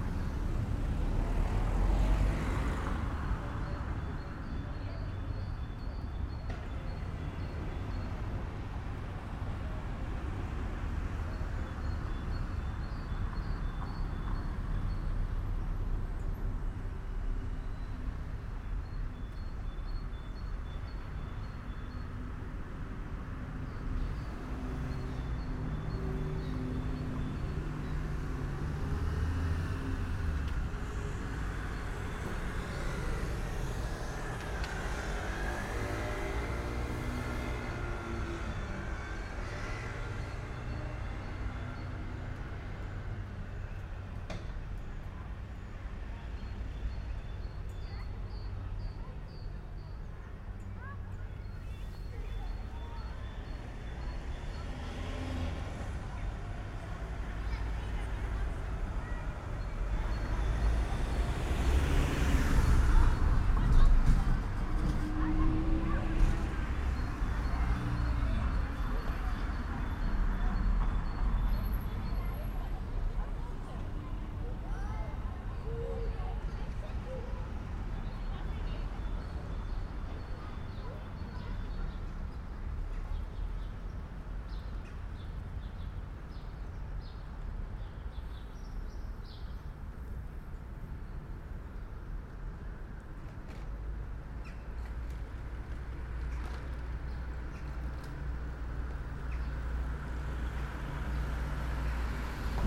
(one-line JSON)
{"title": "Hulksbrug, Angstel, Abcoude, Netherlands - A local corner", "date": "2018-07-03 10:05:00", "description": "Recorded with two DPA 4061's as a binaural setup/format. Traffic passing a small bridge.", "latitude": "52.27", "longitude": "4.97", "altitude": "2", "timezone": "Europe/Amsterdam"}